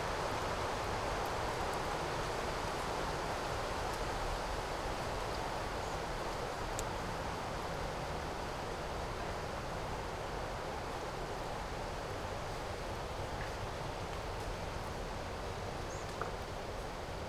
Bonaforth, Höllegrundsbach, Deutschland - Höllegrundsbach 01
recording in the dry creek bed of the Höllegrundsbach
25 May 2012, ~18:00